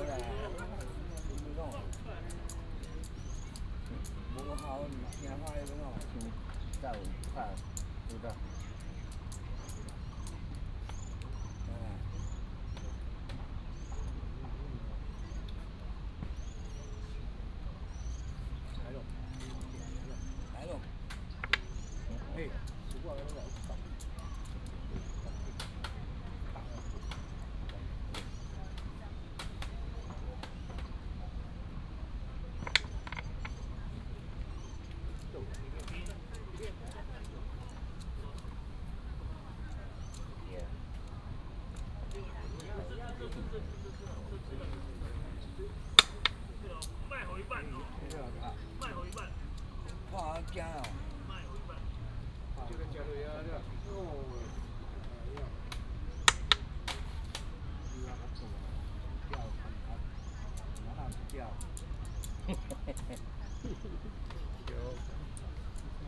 青年公園, Taipei City - playing chess
in the Park, A group of old people are playing chess, birds sound, traffic sound